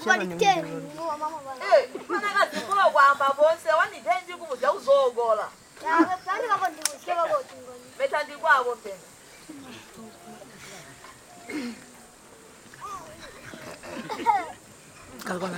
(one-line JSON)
{"title": "Damba Primary School, Binga, Zimbabwe - Lets dance…!", "date": "2012-11-05 11:36:00", "description": "…little drama plays and more singing and dancing…", "latitude": "-17.71", "longitude": "27.45", "altitude": "613", "timezone": "Africa/Harare"}